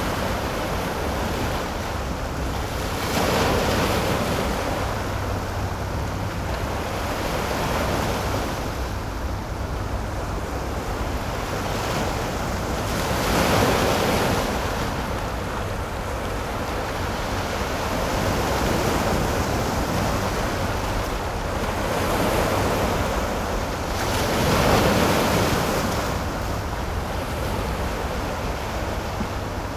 the waves, traffic sound
Sony PCM D50